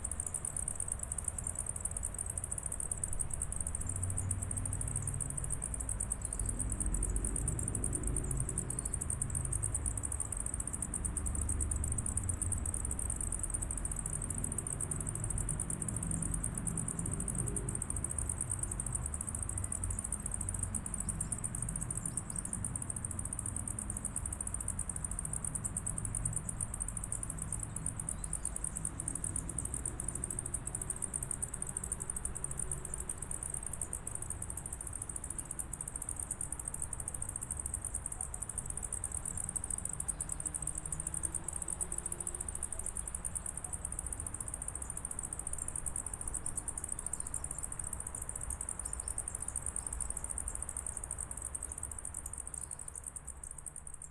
Punto Franco Nord, Trieste, Italy - cricket beats
intense cricket beats near abandoned building, old free harbour Trieste
(Sd702, AT BP4025)
8 September 2013, 16:20